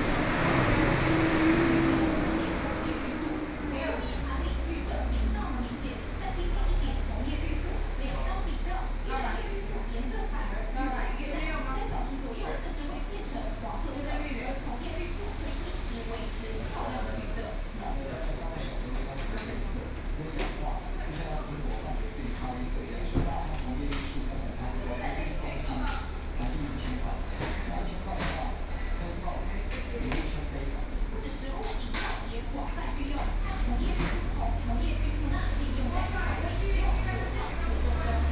Sec., Zhongshan Rd., Su’ao Township - In the restaurant
Inside the restaurant, TV news sound, Zoom H4n+ Soundman OKM II